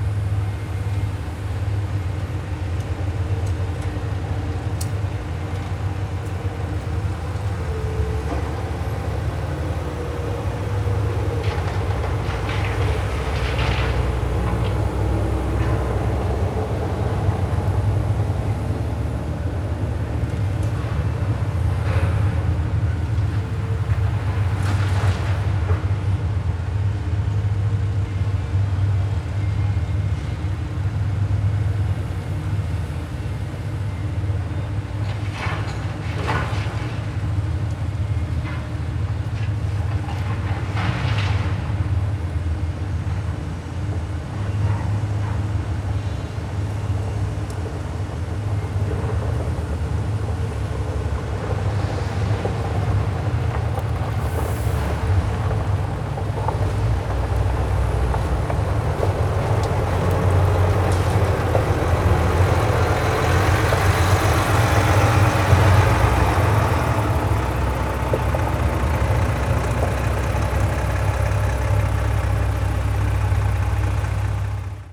Tallinn, Kalamaja, seaplane hangar
heavy construction work at sea plane hangar